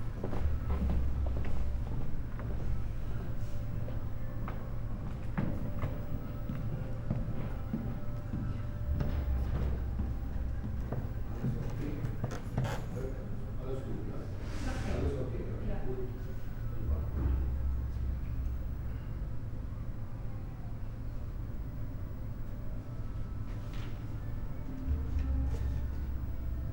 Museen Dahlem, Berlin, Germany - steps hearer
walk, wooden floor and sonic scape at Museen Dahlem, "Probebühne 1", small talks